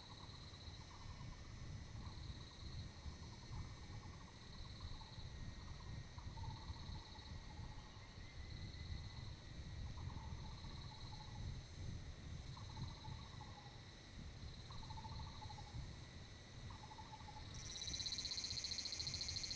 牡丹鄉199縣道7.5K, Mudan Township - Bird and Cicadas
Bird song, Cicadas cry, Small mountain road, Close to the Grove, traffic sound
Zoom H2n MS+XY
2 April 2018